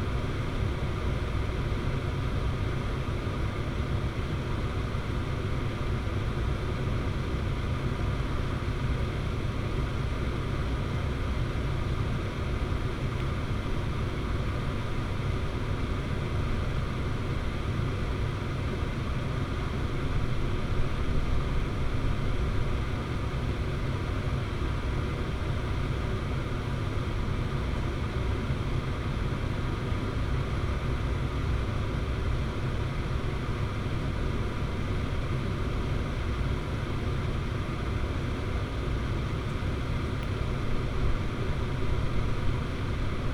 workum, het zool: in front of marina building - the city, the country & me: outside ventilation of marina building
the city, the country & me: august 2, 2012
Workum, The Netherlands, 2 August 2012, ~00:00